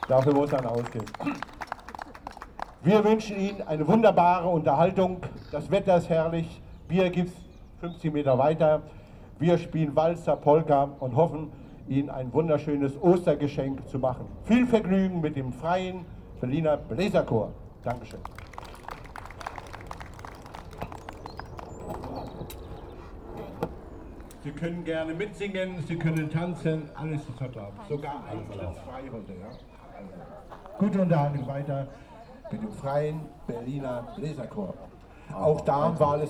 Wilhelm-Kuhr-Straße, Berlin, Germany - Freier Bläser Chor Berlin: the brass bands easter concert
The Freier Bläser Chor Berlin has been in existence since 1926 - the oldest brass band in Berlin. This 2pm concert, in the Bürgerpark Rosengarten Pavilion, took place in beautiful, warm, sunny spring weather.
20 April 2019, ~14:00